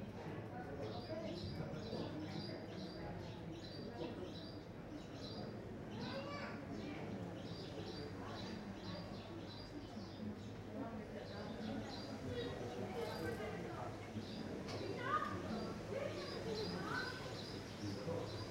Am Abend in einer Seitenstrasse. Die Insel ist Autofrei.
Mai 2003